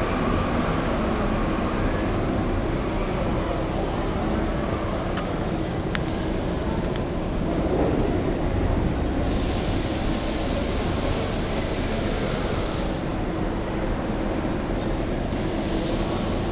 Santiago, Santiago Metropolitan Region, Chile - Sweaty Feet During Rush Hour
In the Santa Ana Metro Station in Santiago, people walk fast to get their trains. It is summertime so many people wear flip flops and some of them sounds sweaty...
Región Metropolitana, Chile, 12 January 2017, 19:00